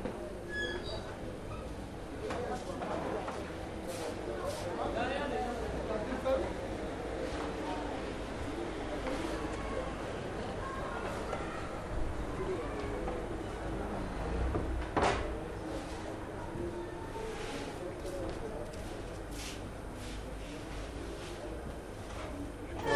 :jaramanah: :at the iraqi bakery: - one
Syria